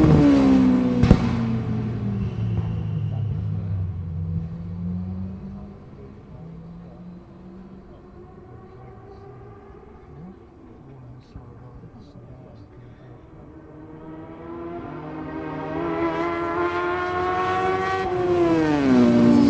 West Kingsdown, UK - World Superbikes 2002 ... Sidecar Qual ...
World Superbikes ... Sidecar Qual ... one point stereo to minidisk ... date correct ... time possibly not ...
West Kingsdown, Longfield, UK, 27 July 2002